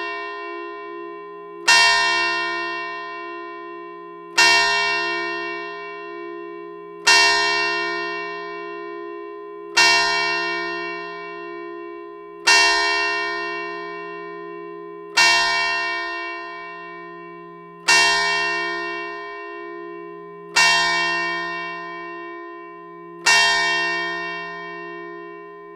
Rue du Ctr de l'Église, Lederzeele, France - Lederzeele - carillon automatisé et volée
Lederzeele - carillon automatisé et volée
12h
Hauts-de-France, France métropolitaine, France, 30 June 2020, 12:00